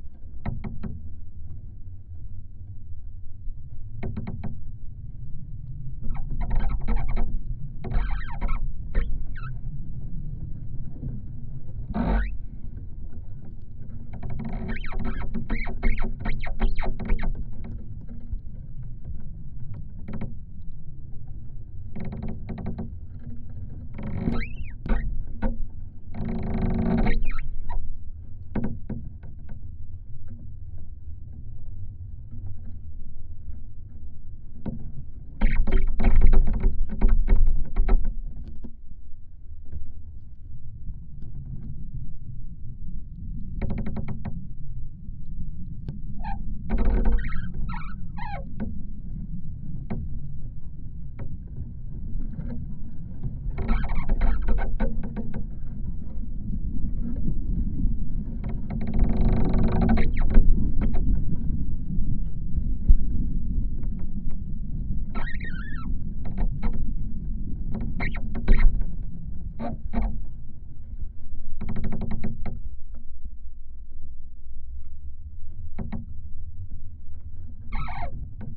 Ąžuolija, Lithuania, dead tree 2
Windy day, half fallen tree rubbing to other tree